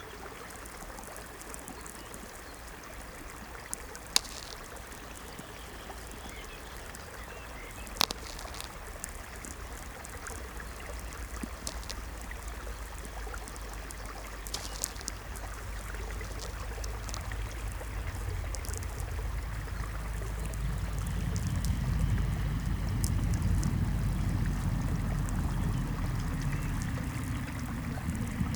Vilkabrukiai, Lithuania, soundscape with VLF
Standing in the middle of the road with VLF receiver. distant streamlet, car passing by and distant lightnings cracklings on VLF...
Utenos rajono savivaldybė, Utenos apskritis, Lietuva, 15 May 2020, ~19:00